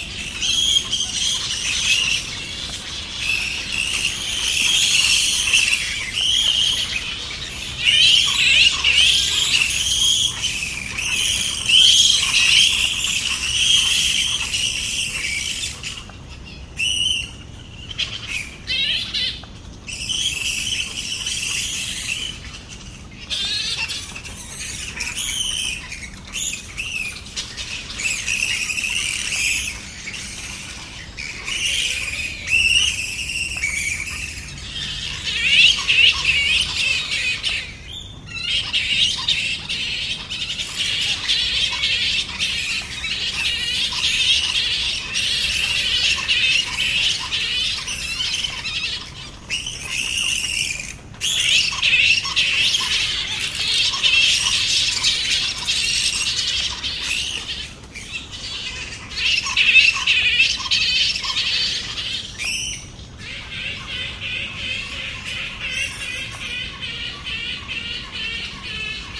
Byron bay. Fruit Bat colony
A colony of hundreds of Fruit Bats wakes up in a local wetland. Their calls can be heard throughout the night as they fly over houses and farms in search of fruit and nectar.